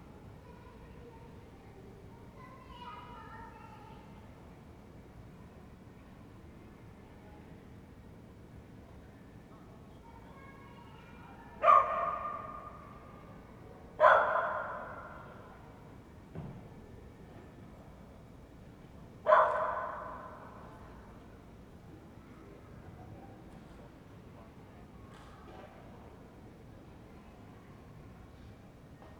Ascolto il tuo cuore, città. I listen to your heart, city. Several chapters **SCROLL DOWN FOR ALL RECORDINGS** - Round noon with sun and dog in the time of COVID19 Soundscape
"Round noon with sun and dog in the time of COVID19" Soundscape
Chapter XXV of Ascolto il tuo cuore, città
Saturday March 22th 2020. Fixed position on an internal terrace at San Salvario district Turin, eighteen days after emergency disposition due to the epidemic of COVID19.
Start at 11:41 a.m. end at 00:43 a.m. duration of recording 1h'01’30”.
Piemonte, Italia, 28 March 2020